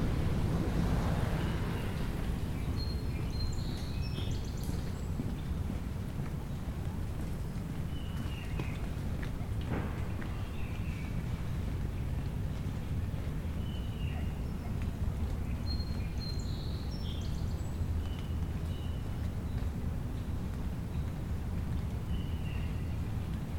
Glen Cedar Bridge - Glen Cedar Bridge after rain
Recorded (with Zoom H5) on the Glen Cedar pedestrian bridge. Some rain can be heard falling from the trees.
Ontario, Canada, 28 May, 20:00